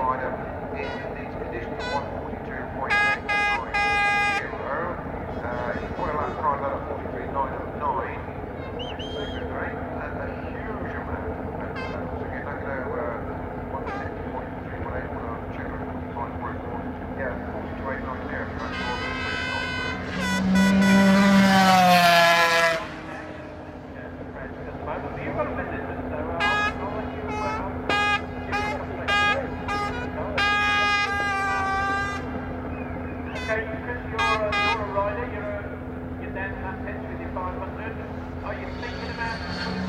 Castle Donington, UK - british motorcycling grand prix 2002 ... qualifying ...
british motorcycle grand prix 2002 ... qualifying ... single point mic to sony minidisk ... commentary ... time approximate ...